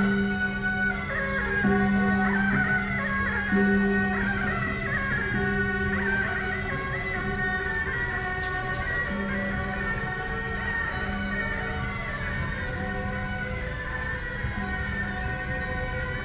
Chelutou St., 三重區, New Taipei City - Traditional temple festivals
4 November 2012, 10:11